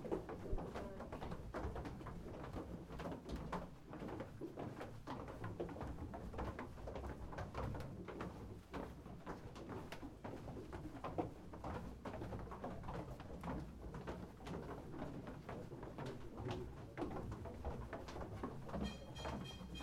Wieliczka, Poland - Salt Mine Vertigo